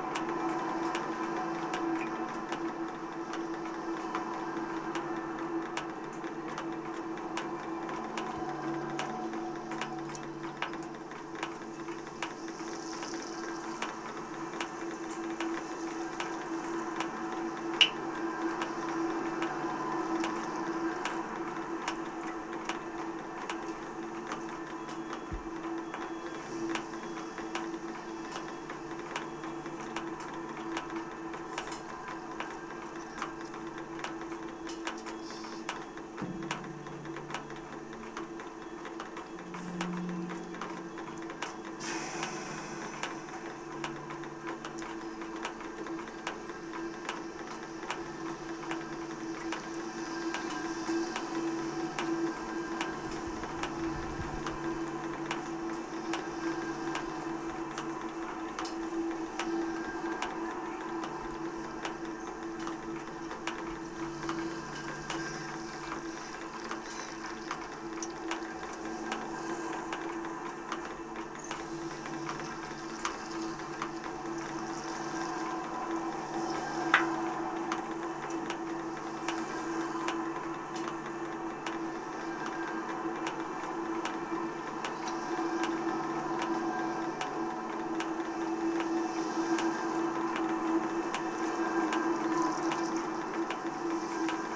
Tallinn, Baltijaam drainpipe - Tallinn, Baltijaam drainpipe (recorded w/ kessu karu)
hidden sounds, water drips and a station announcement amplified by a station gutter drainpipe at Tallinns main train station.
Tallinn, Estonia, 20 April 2011